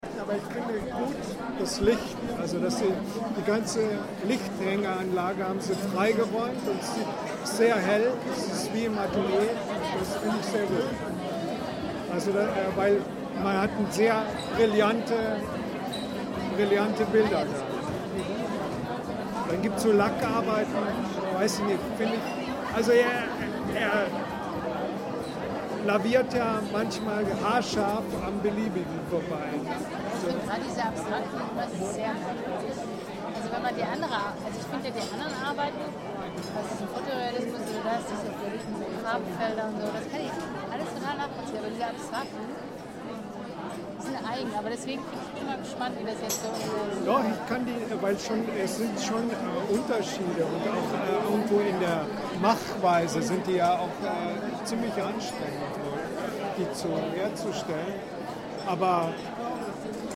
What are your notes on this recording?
One discutant compliments the arrangement of the exhibition, which creates an antmosphere reminding of an atelier: the sheathing of the ceiling was removed so that the harsh light shines directly onto the paintings. And, he says that especially with the laquer paintings Richter manoeuvres very close between "meaningful" and "arbitrary". And he is right! Some of these paintings look like IKEA industry prints.